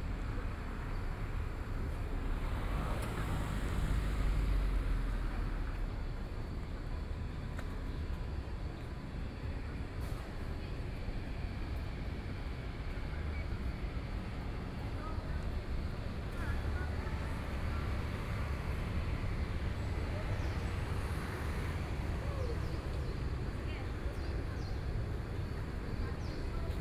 Shuangcheng St., Taipei City - Walking through the small streets
Walking through the small streets
Sony PCM D50+ Soundman OKM II